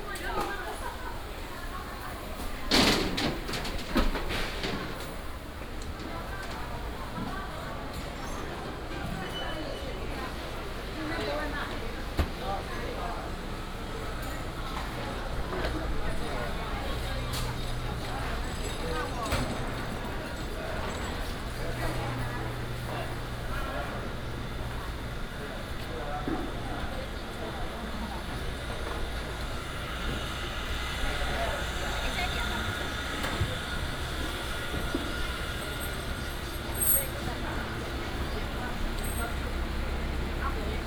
Nanchang W. St., Taoyuan Dist. - Ready to operate the traditional market
Ready to operate the traditional market, Walking in the market
Taoyuan City, Taiwan, 27 June, ~07:00